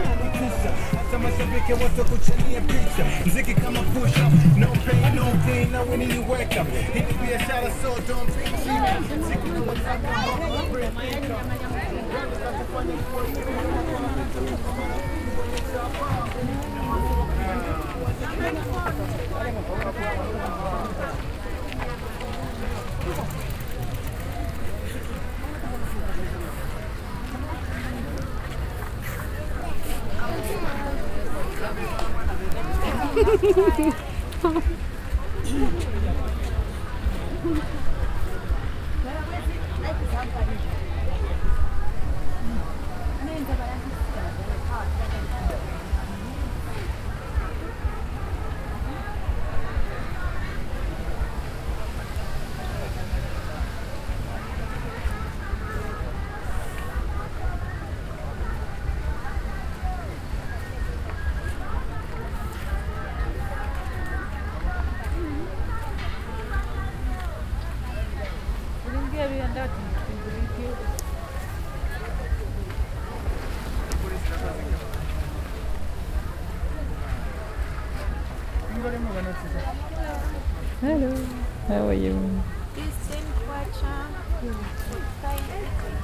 Street Market, Choma, Zambia - Talking to Chitenge traders...
...continuing my stroll among the Chitenge traders... chatting...